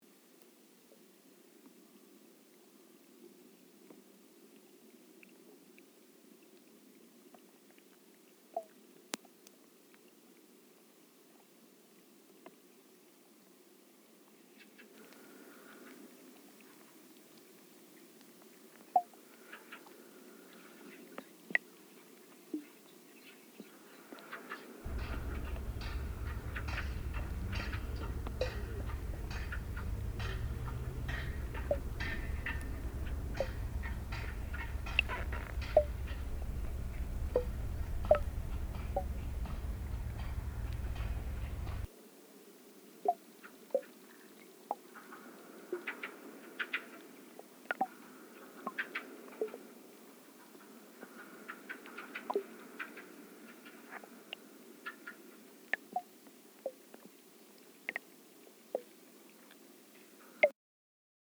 Kanaleneiland, Utrecht, The Netherlands - hydrophones & work
Hydrophones in canal + stereo microphone
12 March, 13:30